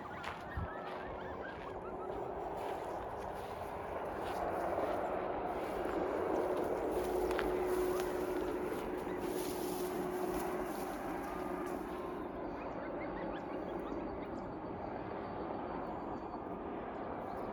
Devonshire Beach Road, Slave Lake - coyotes yipping
An ambulance drove by on the highway below causing the coyotes and coyote pups to start yipping.